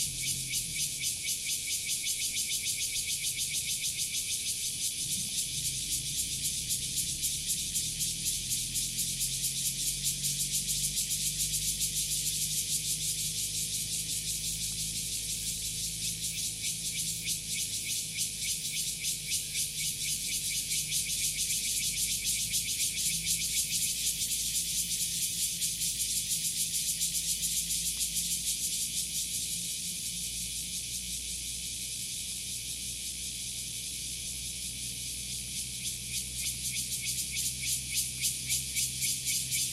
545台灣南投縣埔里鎮暨南大學, NCNU Puli, Taiwan - Cicadas chirping
Cicadas sounds at the campus of National Chi Nan University.
Device: Zoom H2n
Puli Township, Nantou County, Taiwan, September 2015